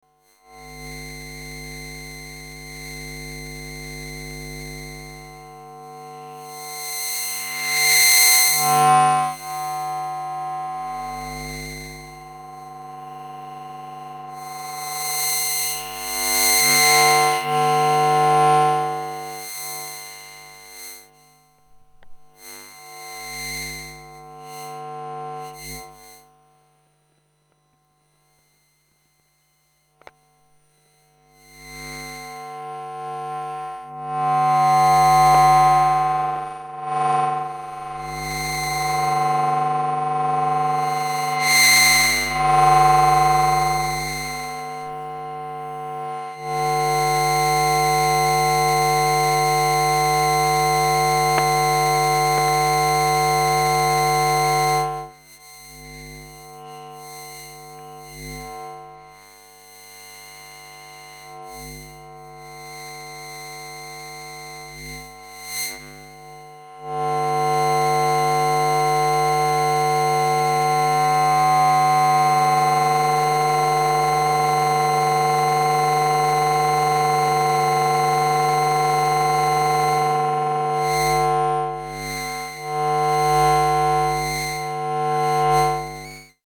Rue Megevand, Besançon, France - borne voiture - mairie
micro Elektrosluch 3+
Festival Bien urbain
Jérome Fino & Somaticae
5 June, 15:00